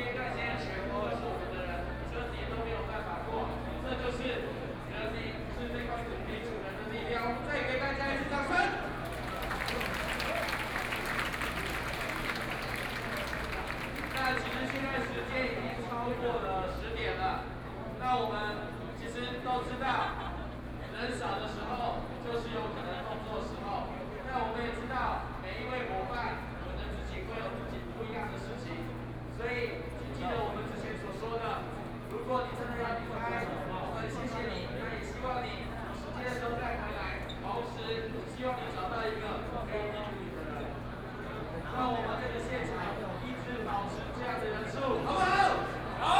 中正區幸福里, Taipei City - Walking through the site in protest
Walking through the site in protest, People and students occupied the Legislature
Binaural recordings
Taipei City, Taiwan, 19 March 2014, ~22:00